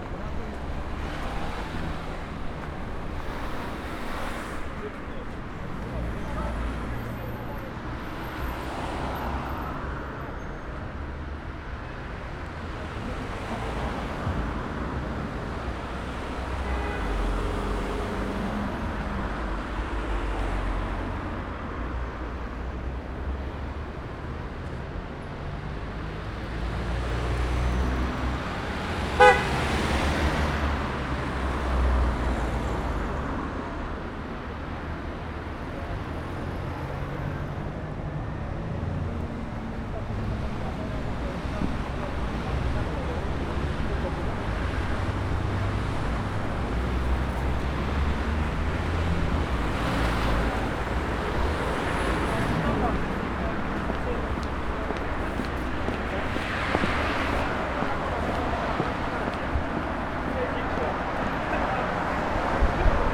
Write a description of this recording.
Bulevardul geberal Gheorghe Magheru, walking to Calea Victoriei